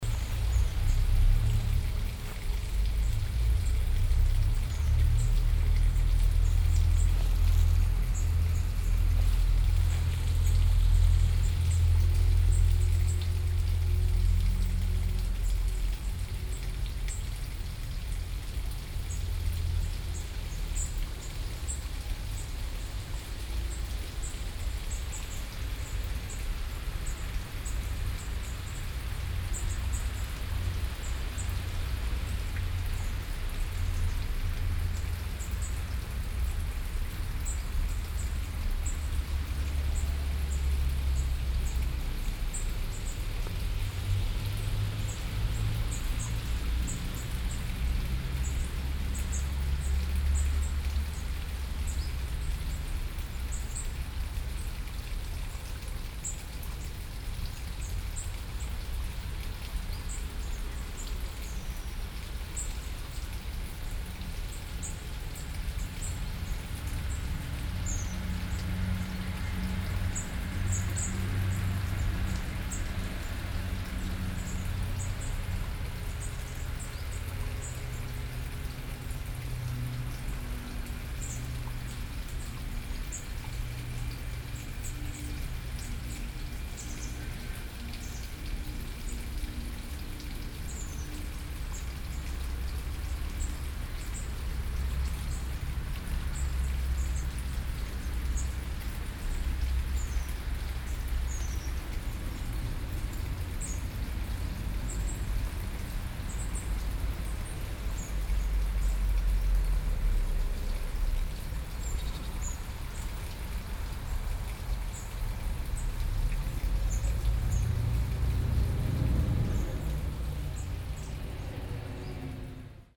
kinzweiler, kinzweiler burg, am teichzufluss
ein kleiner flacher burgteich am nachmittag, das plätschern des offenen teichzuflusses, verkehrsgeräusche im hintergrund
soundmap nrw: social ambiences/ listen to the people - in & outdoor nearfield recordings